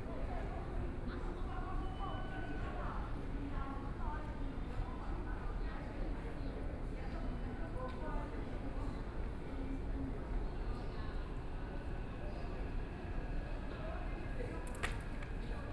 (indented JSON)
{
  "title": "Chiang Kai-Shek Memorial Hall Station, Taipei - soundwalk",
  "date": "2013-09-27 19:49:00",
  "description": "Walking into the MRT, Through the underpass, Waiting for the train platform to the MRT, Sony PCM D50 + Soundman OKM II",
  "latitude": "25.03",
  "longitude": "121.52",
  "altitude": "11",
  "timezone": "Asia/Taipei"
}